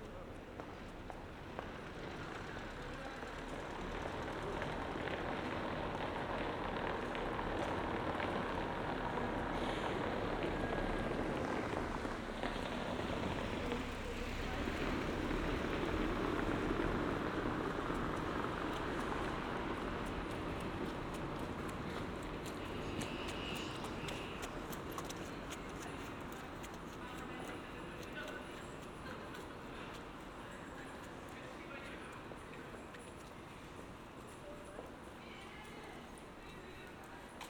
Berlin: Vermessungspunkt Friedel- / Pflügerstraße - Klangvermessung Kreuzkölln ::: 06.10.2012 ::: 03:29
Berlin, Germany, 6 October 2012, 03:29